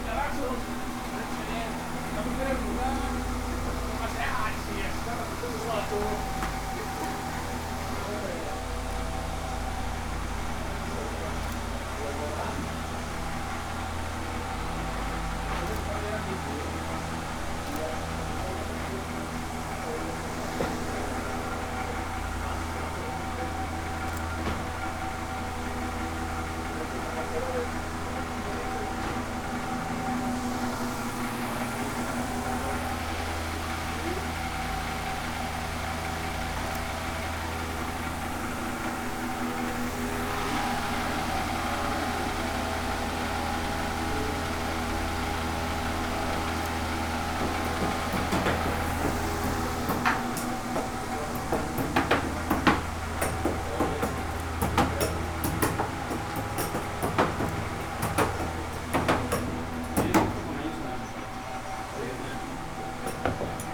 Peñitas, Jardines del Moral, León, Gto., Mexico - Tenería Clavando
A tannery in which the old-style skins are tanned.
A person is heard nailing the skin to a board to immediately put it to dry next to fans that are also heard.
I made this recording on August 8, 2019, at 10:37 a.m.
I used a Tascam DR-05X with its built-in microphones and a Tascam WS-11 windshield.
Original Recording:
Type: Stereo
Una tenería en la que se curten las pieles al estilo antiguo.
Se escucha a una persona clavando la piel a una tabla para enseguida ponerla a secar junto a ventiladores que también se escuchan.
Esta grabación la hice el 8 de agosto 2019 a las 10:37 horas.
Guanajuato, México, 8 August 2019